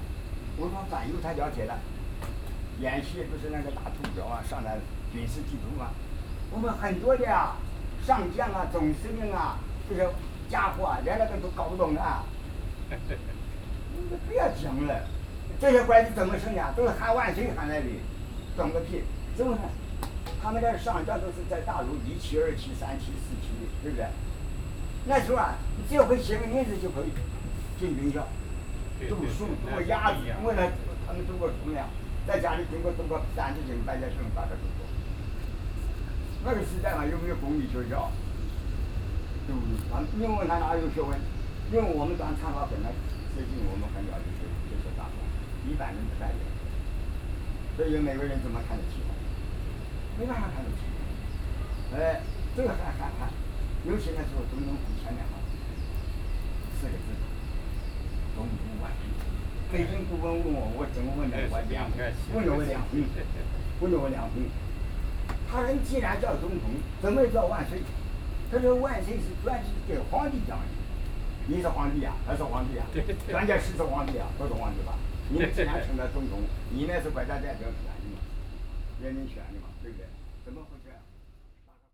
Chat between elderly, Sony PCM D50 + Soundman OKM II
中正區 (Zhongzheng), 台北市 (Taipei City), 中華民國